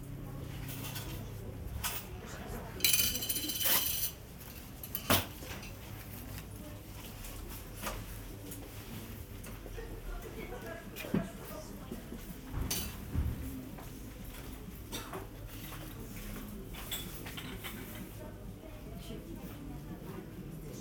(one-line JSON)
{"title": "Severodvinsk, Russia - shop TSIRKULNY", "date": "2013-01-06 16:06:00", "description": "shop TSIRKULNY.\nМагазин \"Циркульный\", атмосфера.", "latitude": "64.55", "longitude": "39.78", "altitude": "8", "timezone": "Europe/Moscow"}